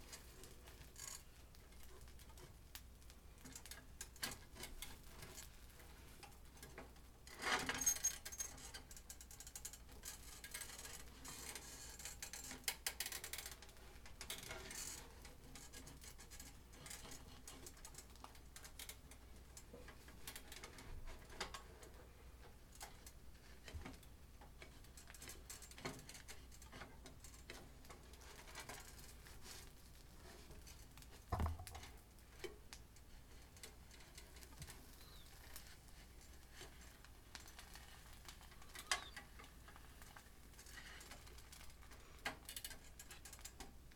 Baseline Sub, Boulder, CO, USA - Sound of Cooking